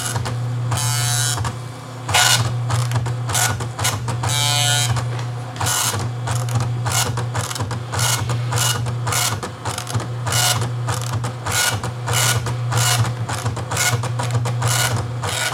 {
  "title": "Radio Free Robots Sound Studio",
  "date": "2007-12-17 19:03:00",
  "latitude": "48.89",
  "longitude": "2.35",
  "altitude": "82",
  "timezone": "GMT+1"
}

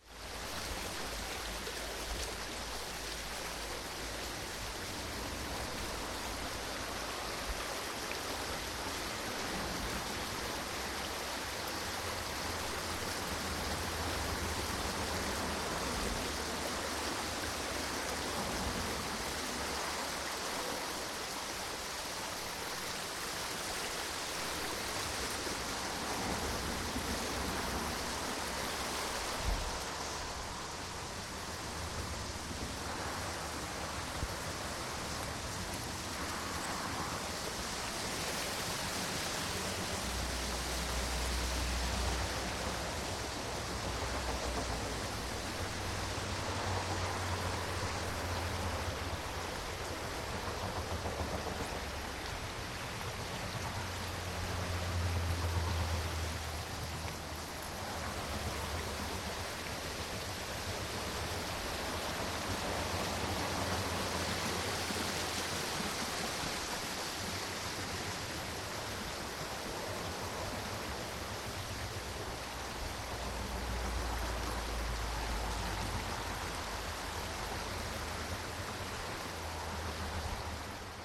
{
  "title": "The stream and the N11 sounding together, Glen of the Downs, Bellevue Demesne, Co. Wicklow, Ireland - Listening to the stream and the N11 playing together",
  "date": "2002-10-01 13:30:00",
  "description": "When I revisited the Glen of the Downs site (where I had lived on a road protest in 1997) I was obsessed with the relationship between the sound of the stream which I remembered so clearly, and the din of the N11 motorway drowning it out. In this recording - a rare moment when I actually stood still for some moments and listened properly to the sounds - you can hear both the N11 and the stream together. I was very interested in the relationship between the two sounds and was still trying to understand what it means to call sounds \"Nature\" or \"Manmade\". I am still trying to understand this, because I am not sure the separation is useful. Recorded in 2002 with unknown microphone and minidisc player.",
  "latitude": "53.14",
  "longitude": "-6.12",
  "altitude": "104",
  "timezone": "Europe/Dublin"
}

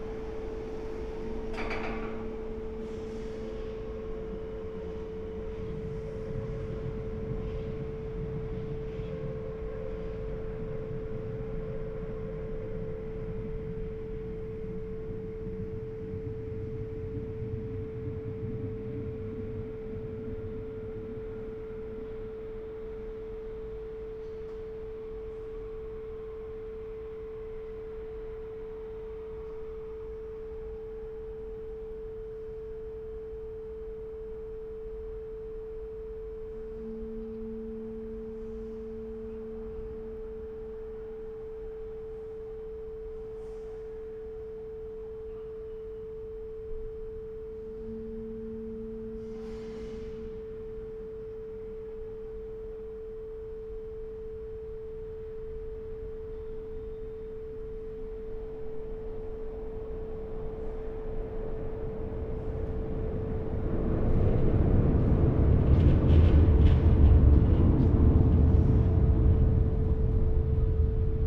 {
  "title": "Gleisdreick, Berlin - intercom, tones and tunes",
  "date": "2016-05-26 23:30:00",
  "description": "close to midnight at Gleisdreick, Berlin, at a gate, attracted by the tone of an intercom and other sounds of unclear origin, night ambience.\n(Sony PCM D50, Primo EM172)",
  "latitude": "52.50",
  "longitude": "13.37",
  "altitude": "33",
  "timezone": "Europe/Berlin"
}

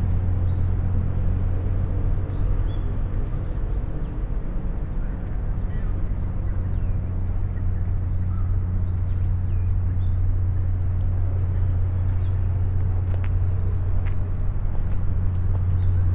{
  "title": "koishikawa korakuen gardens, tokyo - gardens sonority",
  "date": "2013-11-13 14:58:00",
  "latitude": "35.71",
  "longitude": "139.75",
  "altitude": "12",
  "timezone": "Asia/Tokyo"
}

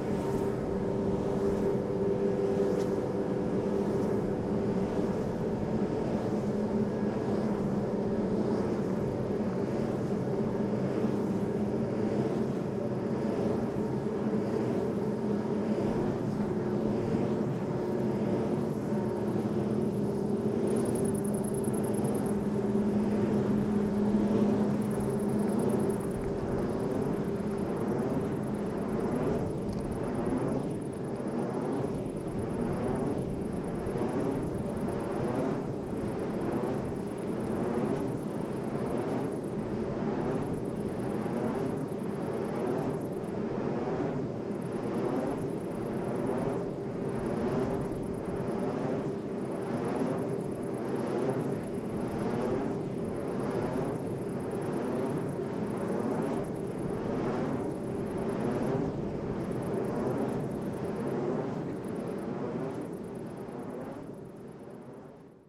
{
  "title": "Bouin, France - rotating motor Wind turbine",
  "date": "2016-07-19 14:20:00",
  "description": "Une Eolienne change d'axe, présence de grillons.\nThe wind turbine was about to change its axis.\nCrickets at the end.\n/Oktava mk012 ORTF & SD mixpre & Zoom h4n",
  "latitude": "46.95",
  "longitude": "-2.05",
  "altitude": "1",
  "timezone": "GMT+1"
}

